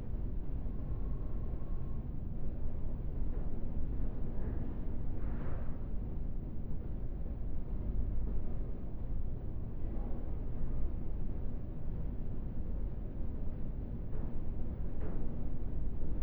Altstadt, Düsseldorf, Deutschland - Düsseldorf, Salm Bestattungen, pre room
At the underearth pre room hall to the private chael and some seperated farewell rooms.
The sound of the carpeted silent ambience with the crackling accents of some electric lights and wooden doors. In the background some voices from the entrance.
This recording is part of the intermedia sound art exhibition project - sonic states
soundmap nrw - topographic field recordings, social ambiences and art places
Düsseldorf, Germany, 24 January